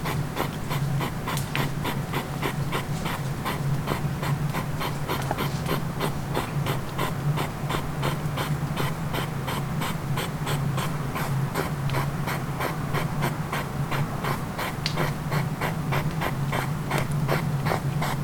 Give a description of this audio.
World Listening Day, Bonaforth, Hedgehogs love, fire